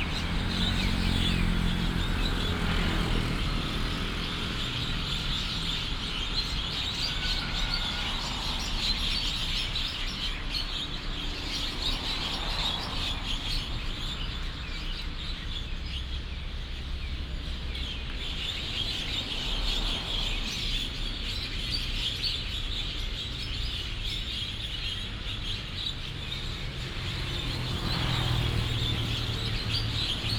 潭子加工區, Taichung City - birds and traffic sound
Cluster a large number of birds, Traffic sound, Binaural recordings, Sony PCM D100+ Soundman OKM II
9 October 2017, ~18:00